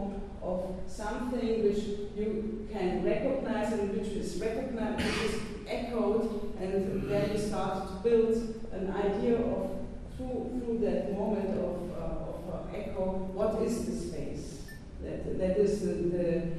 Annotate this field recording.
LIMINAL ZONES WORKSHOP, CYPRUS, Nikosia, 5-7 Nov 2008, Angela Meltiopoulos at her lecture "the blast of the possible"